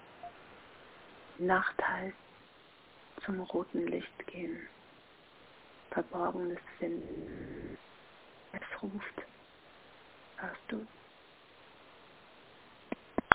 rotes Licht - die nacht ruft
Berlin, Deutschland